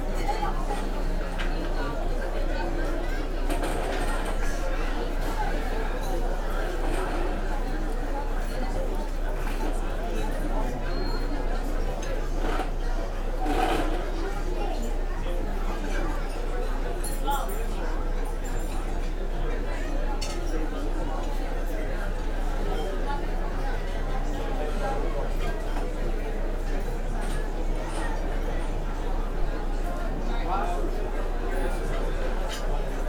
sea room, Novigrad, Croatia - beating heart, murmur of people outside
16 July 2014, 21:17